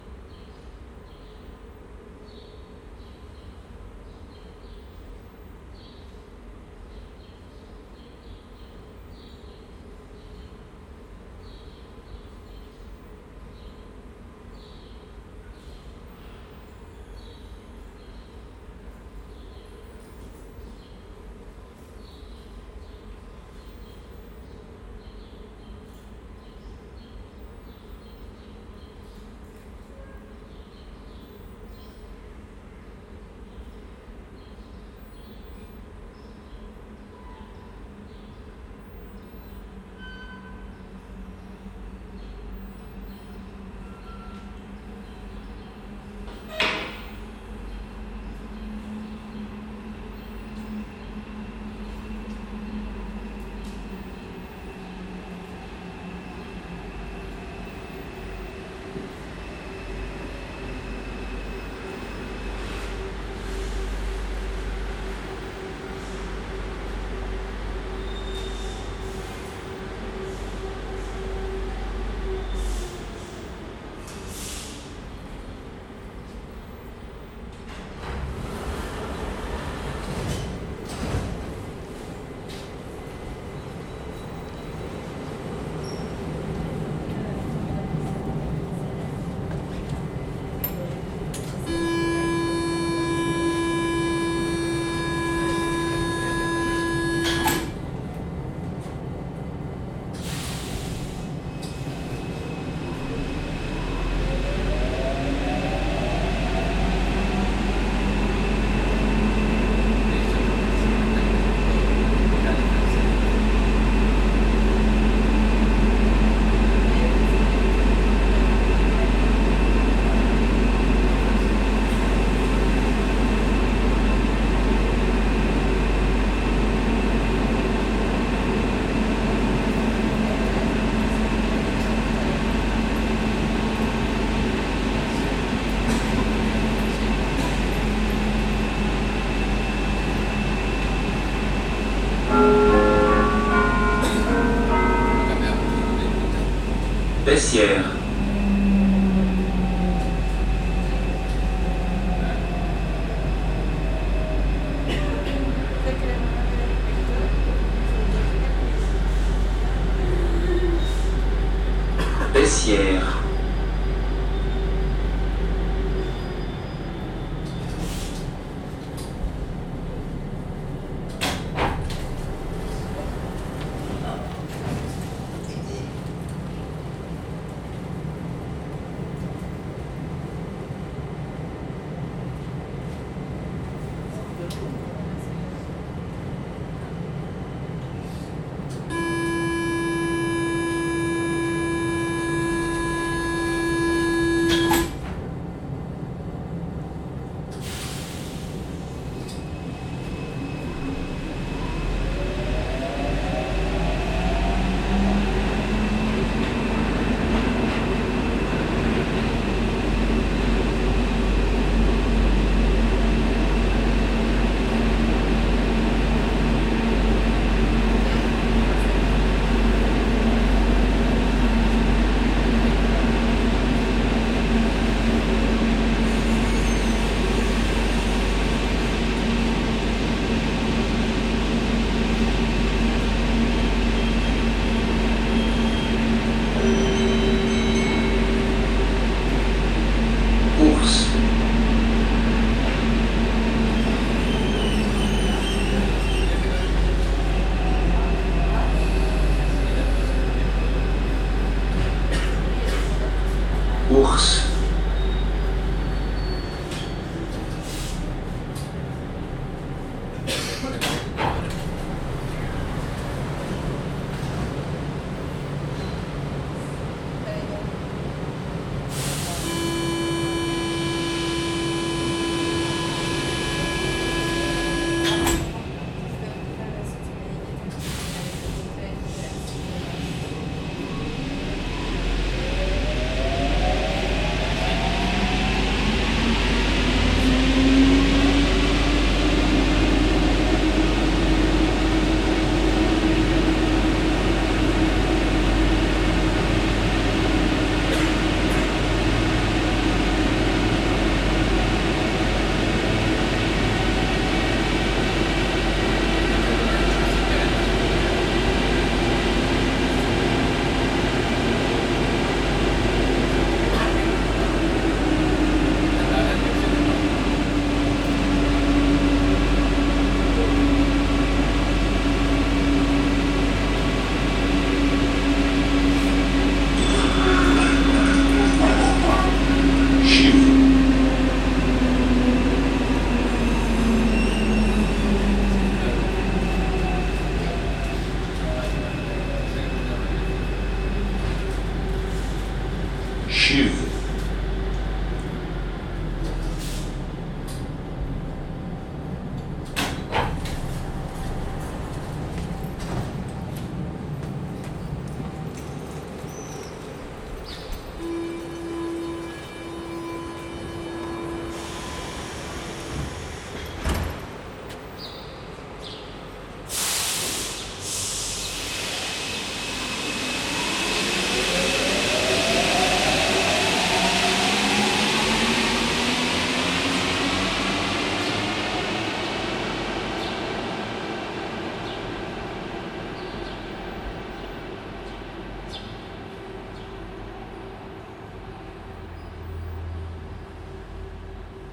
MetroM2 inside from_Riponne_to_CHUV
SCHOEPS MSTC 64 U, Sonosax préamp Edirol R09
by Jean-Philippe Zwahlen